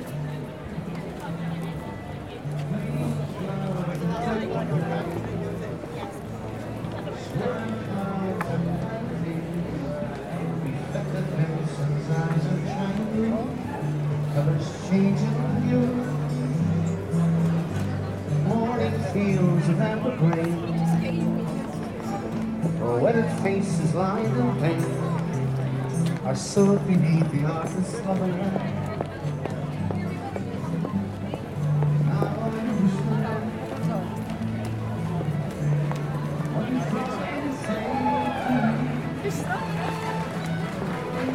Grafton Street, Dublin, Ireland - A walk up Grafton Street
This recording was made walking up Grafton Street in Dublin. This a pedestrian street, usually quite busy as it is also a main shopping street. There is always lots of activity and especially buskers.
Recording was made with a Zoom H4N.